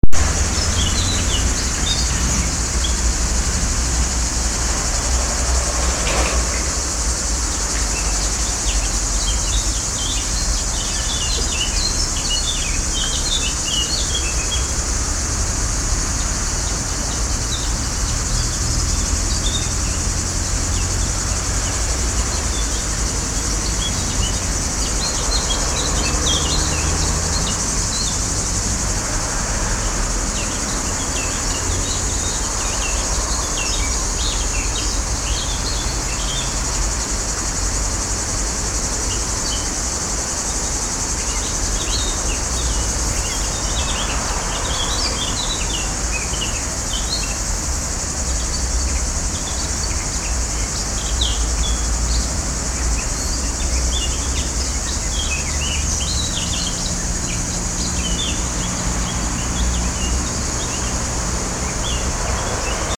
Parabiago MI, Italia - Oasi di pace e di bellezza
All'oasi di pace e di bellezza